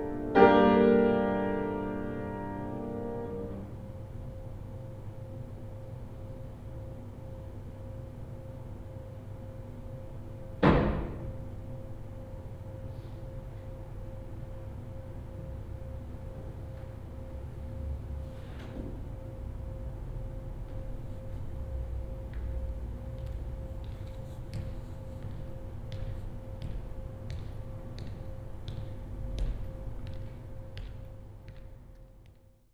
(binaural) a friend playing piano in a ballet practice room located in one of the attics of the Grand Theater. (sony d50 + luhd pm01 binaurals)
Poznań, Poland